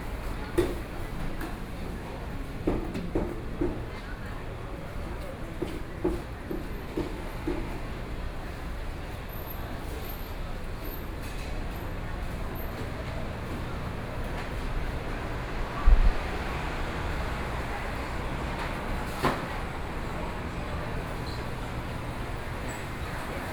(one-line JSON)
{"title": "宜蘭市第二(南館)公有零售市場, Yilan City - Walking through the traditional market", "date": "2014-07-05 09:10:00", "description": "Walking through the traditional market, From the ground floor, To the ground floor, Then went outside outdoor market\nSony PCM D50+ Soundman OKM II", "latitude": "24.76", "longitude": "121.75", "altitude": "15", "timezone": "Asia/Taipei"}